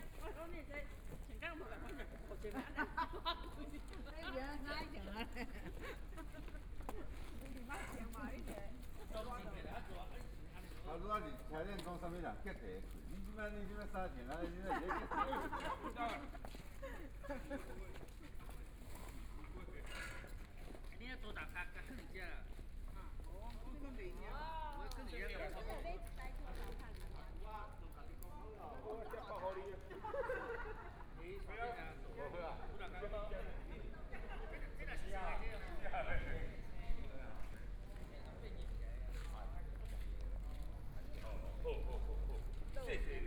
普天宮, Fangyuan Township - in front of the temple
In the square in front of the temple, Tourists and vendors, Traffic Sound, Zoom H4n+ Soundman OKM II, Best with Headphone( SoundMap20140105- 3 )
Fangyuan Township, 芳漢路芳二段161巷100號, January 2014